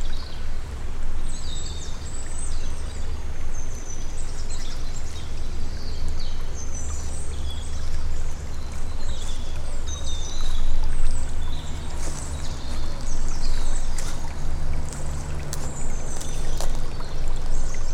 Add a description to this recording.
the river is small here, a car is passing nearby. SD-702, Me-64, NOS.